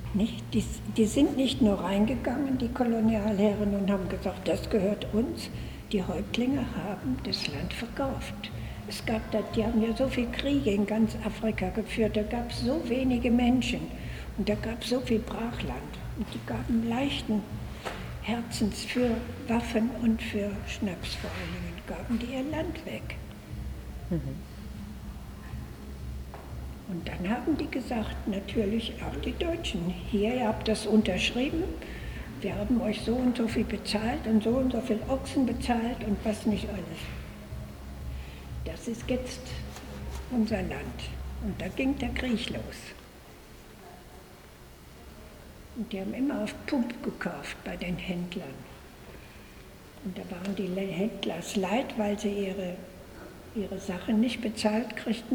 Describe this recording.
Maria Fisch grants me a special guided tour through the Swakopmund Museum... Maria Fisch spent 20 years in the Kavango area, first as a doctor then as ethnographer. She published many books on the history, culture and languages of the area.